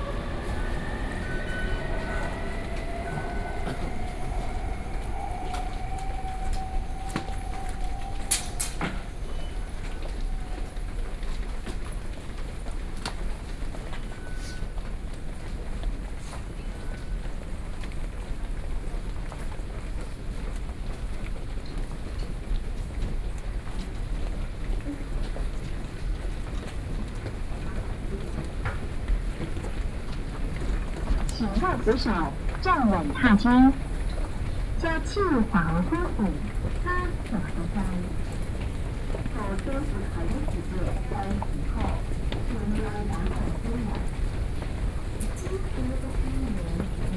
Taipei Main Station, Taipei City - walking in the MRT stations